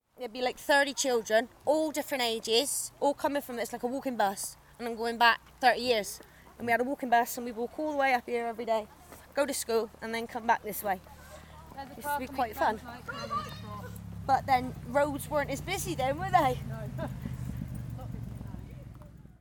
Efford Walk One: About the walking bus on Military Road - About the walking bus on Military Road
Plymouth, UK, 14 September 2010